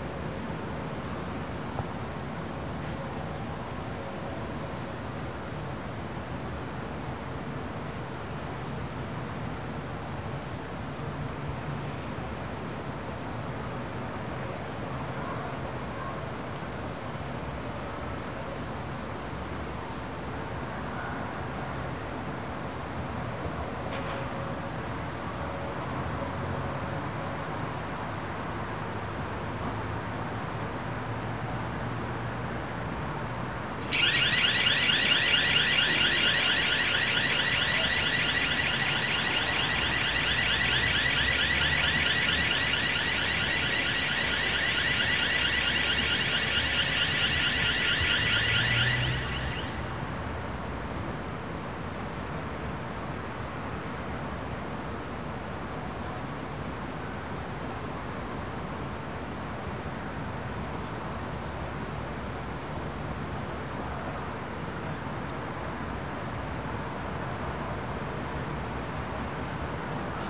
Poznan, balcony - rarefied night air
alarm going on and off and echoing off the vast apartment buildings around. conversation and laughs of a juvenile group among the trees. a carpet of crickets on a field in front of me. not too much traffic, sounds spreads effectively and repeats with a nice short delay. summer night - at it's peak.
Poznan, Poland, 10 August